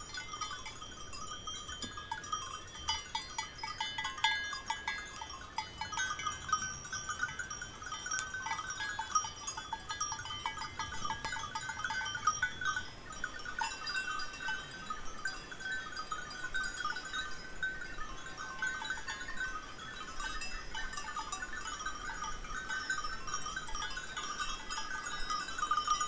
Flumet, France - Goats and bells
Powerful white noise of the Arrondine river, walking with the friendly goats and their bells.